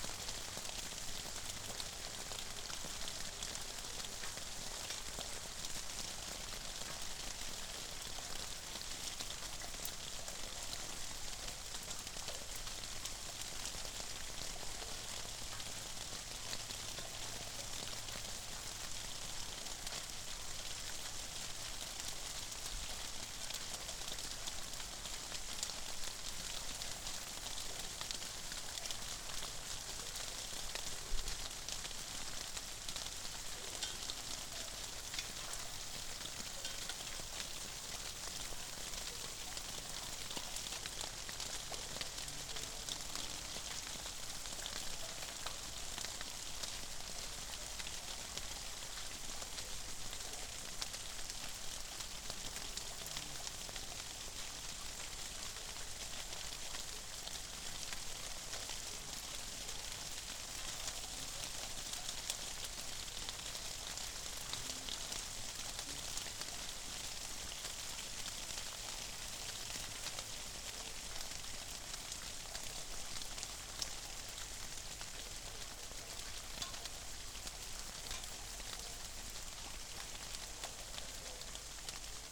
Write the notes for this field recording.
Old watertower after a rain. It leaks water from above... One day it will surely fall down and hopefully I will not stay near recording..